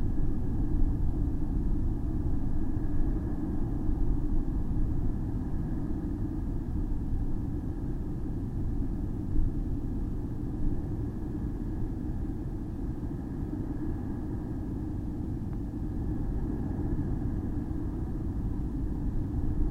Severn Beach Mud 05
Recording of mud near the Severn Suspension Bridge during a windy night.